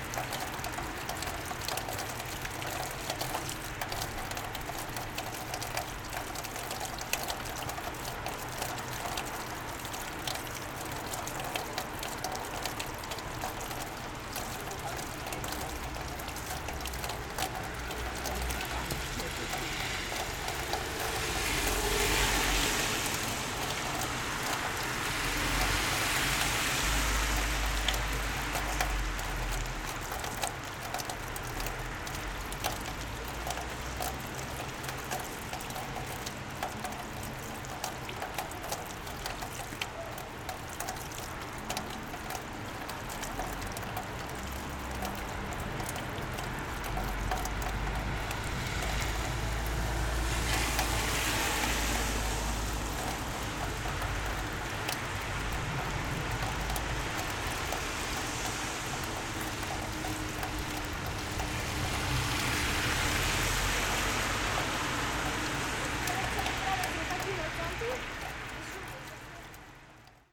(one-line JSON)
{"title": "Norrmalm, Stockholm - Rain in Vasagatan", "date": "2016-08-04 17:00:00", "description": "Sound of rain through outer pipes\nSo de la pluja a través de canonades exteriors\nSonido de la lluvia a través de cañerias exteriores", "latitude": "59.33", "longitude": "18.06", "altitude": "23", "timezone": "Europe/Stockholm"}